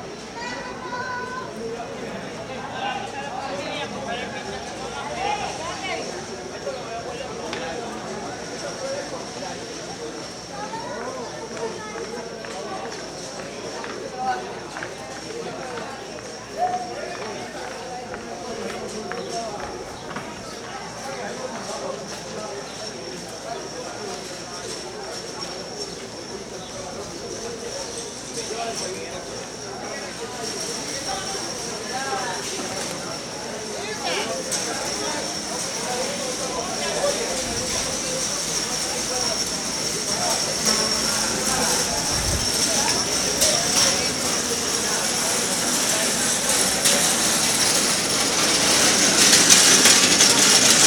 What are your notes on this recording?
street corner near the market place